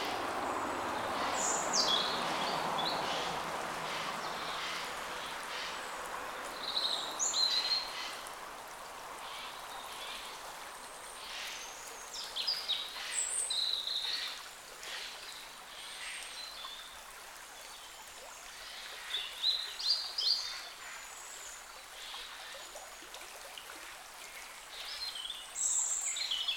A la sortie de Carennac, le long d'un petit bras de la Dordogne, les oiseaux dans la forêt
Zoom H5 + XYH-5